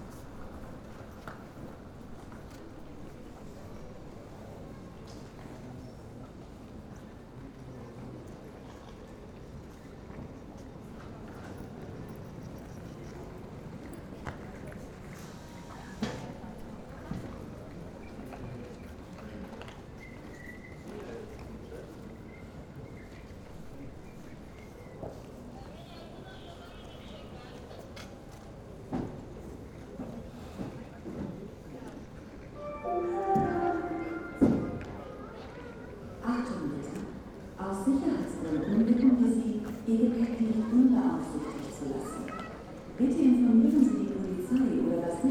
airport Luxembourg (LUX) - early morning hall ambience
murmur of voices, steps and a security announcement, airport Luxembourg, early morning hall ambience
(Sony PCM D50)